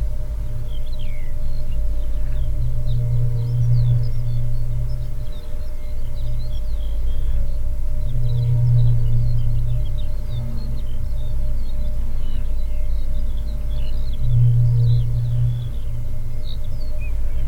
heinerscheid, wind mill
At a wind mill tower of an older wind energy plant. The low sound of a regular mechanic move plus some metallic accents.
Heinerscheid, Windmühle
Bei einer Windmühle von einem älteren Windenergiepark. Das tiefe Geräusch von der regelmäßigen mechanischen Bewegung sowie einige metallene Akzente.
Heinerscheid, éolienne
Le mât d’une éolienne dans une ancienne ferme éolienne. Le bruit bas d’un mécanisme régulier plus quelques bruits métalliques.
Project - Klangraum Our - topographic field recordings, sound objects and social ambiences